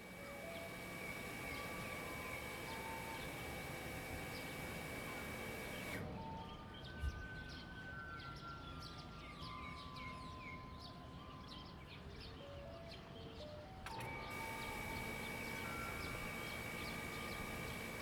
{
  "title": "蕃薯村, Shueilin Township - Small village",
  "date": "2015-02-18 08:55:00",
  "description": "Small village, Pumping motor sound, broadcast message, the sound of birds\nZoom H2n MS +XY",
  "latitude": "23.54",
  "longitude": "120.22",
  "altitude": "6",
  "timezone": "Asia/Taipei"
}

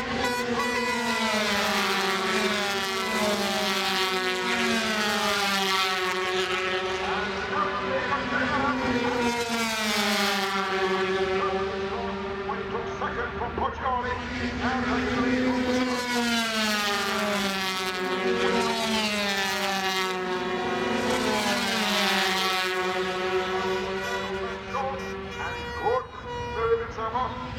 {
  "title": "Castle Donington, UK - British Motorcycle Grand Prix 2002 ... 125 ...",
  "date": "2002-07-14 11:00:00",
  "description": "125cc motorcycle race ... part one ... Starkeys ... Donington Park ... race and associated noise ... Sony ECM 959 one point stereo mic to Sony Minidisk ...",
  "latitude": "52.83",
  "longitude": "-1.37",
  "altitude": "81",
  "timezone": "Europe/Berlin"
}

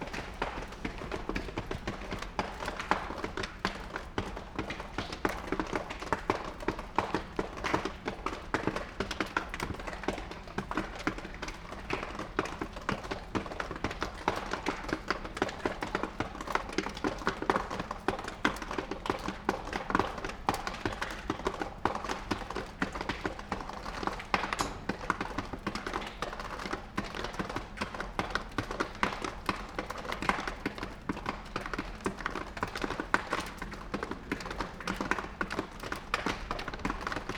{
  "title": "Kl.Steinstr., Halle (Saale), Deutschland - rain percussion on plastic cover",
  "date": "2016-10-24 21:30:00",
  "description": "Kleine Steinstr., Halle, rain drops falling on a plastic cover in front of a very old house, cold, wet and quiet Monday evening.\n(Sony PCM D50, Primo EM172)",
  "latitude": "51.48",
  "longitude": "11.97",
  "altitude": "99",
  "timezone": "Europe/Berlin"
}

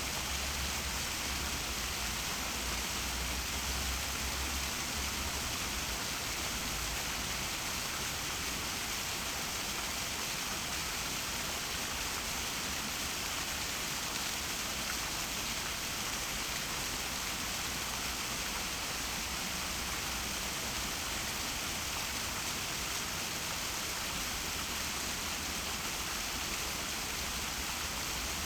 {
  "title": "berlin, rudolph-wilde-park: hirschbrunnen - the city, the country & me: hart fountain",
  "date": "2011-09-16 16:19:00",
  "description": "the city, the country & me: september 16, 2011",
  "latitude": "52.48",
  "longitude": "13.34",
  "altitude": "41",
  "timezone": "Europe/Berlin"
}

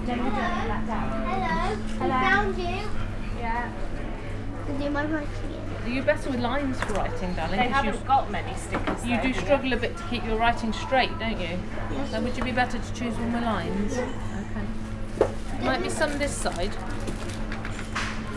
{
  "title": "Inside a shop at Great Malvern Worcestershire, UK - Inside a Shop",
  "date": "2019-06-25 11:13:00",
  "description": "This was accidentally recorded while wandering around in search of a note book. Mix Pre 3 and 2 Beyer lavaliers",
  "latitude": "52.11",
  "longitude": "-2.33",
  "altitude": "135",
  "timezone": "Europe/London"
}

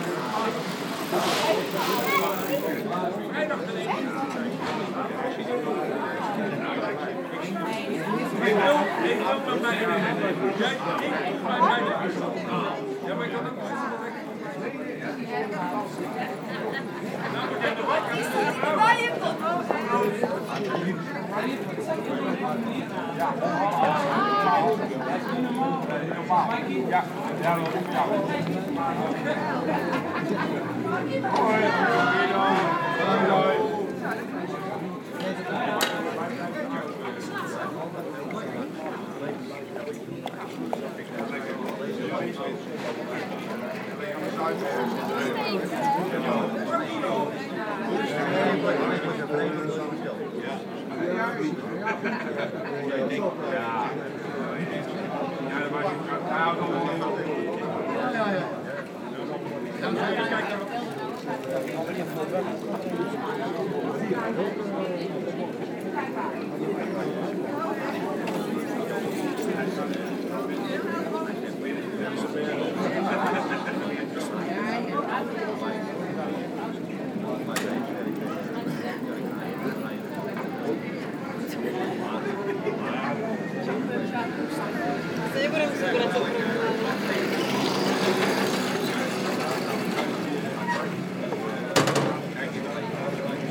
{
  "title": "Katwijk-Aan-Zee, Nederlands - Bar terrace and sunny afternoon",
  "date": "2019-03-29 17:50:00",
  "description": "Katwijk-Aan-Zee, Taatedam. Lively discussions on the terrace during a very sunny afternoon.",
  "latitude": "52.20",
  "longitude": "4.40",
  "altitude": "7",
  "timezone": "Europe/Amsterdam"
}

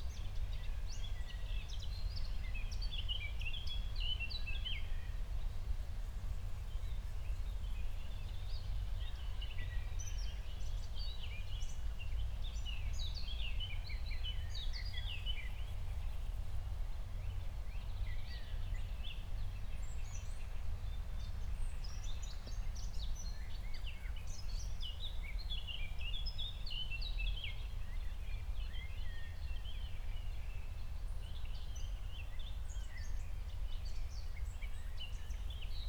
{
  "title": "Berlin, Buch, Mittelbruch / Torfstich - wetland, nature reserve",
  "date": "2020-06-19 12:00:00",
  "description": "12:00 Berlin, Buch, Mittelbruch / Torfstich 1",
  "latitude": "52.65",
  "longitude": "13.50",
  "altitude": "55",
  "timezone": "Europe/Berlin"
}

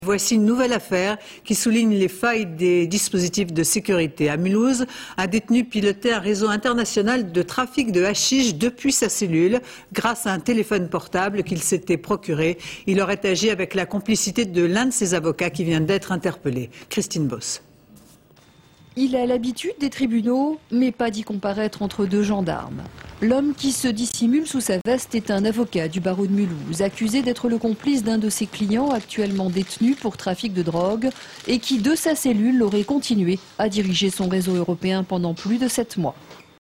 {
  "title": "Mulhouse, Maison d'arret, Traffic stupefiant",
  "latitude": "47.75",
  "longitude": "7.34",
  "altitude": "239",
  "timezone": "GMT+1"
}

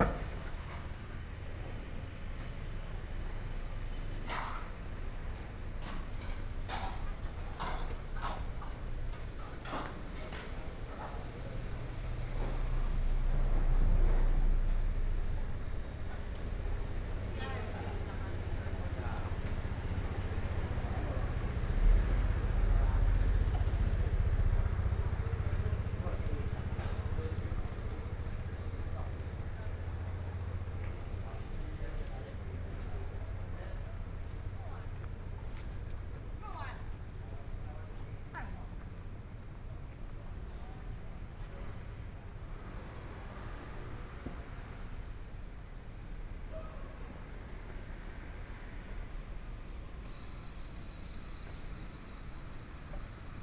{
  "title": "中山區正義里, Taipei City - in the streets at night",
  "date": "2014-02-28 21:30:00",
  "description": "walking through in the Street, Through a variety of different shops\nPlease turn up the volume a little\nBinaural recordings, Sony PCM D100 + Soundman OKM II",
  "latitude": "25.05",
  "longitude": "121.53",
  "timezone": "Asia/Taipei"
}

